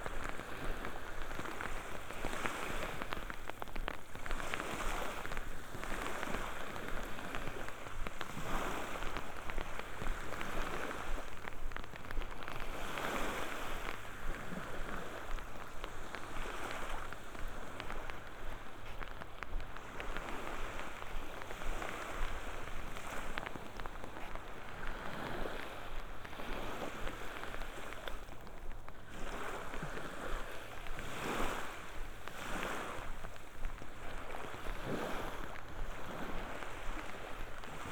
Kopalino, beach - torrential rain
walking along the sea shore in rain with the hood of my jacket on. rain drops drumming on the fabric, muted sound of the waves. rain easing off. (sony d50 + luhd pm-01bins)